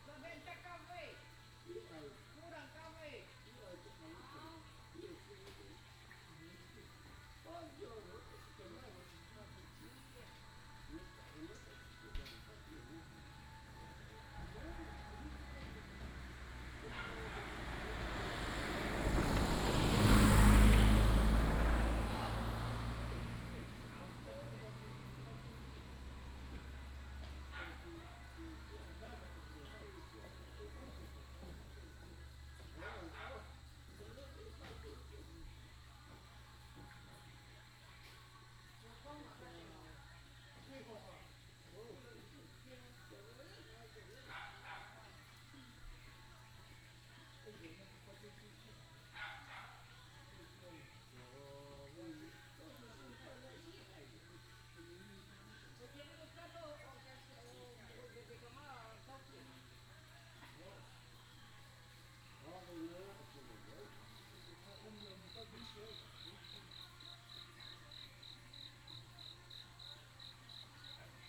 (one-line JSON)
{"title": "199縣道199號, Mudan Township, Pingtung County - At the corner of the junction", "date": "2018-04-02 18:56:00", "description": "At the corner of the junction, Insect noise, traffic sound, Frog croak, Beside the Aboriginal restaurant, Dog barking\nBinaural recordings, Sony PCM D100+ Soundman OKM II", "latitude": "22.18", "longitude": "120.85", "altitude": "281", "timezone": "Asia/Taipei"}